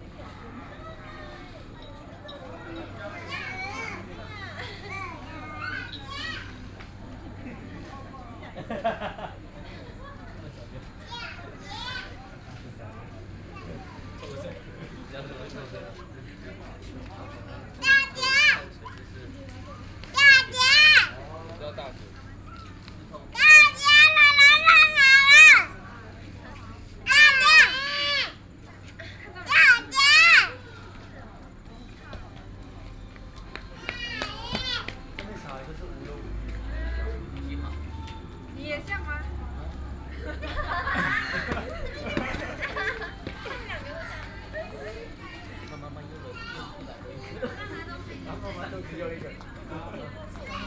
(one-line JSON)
{"title": "Shihfen Train Station, Pingxi District, New Taipei City - Firework", "date": "2012-11-13 15:44:00", "latitude": "25.04", "longitude": "121.78", "altitude": "179", "timezone": "Asia/Taipei"}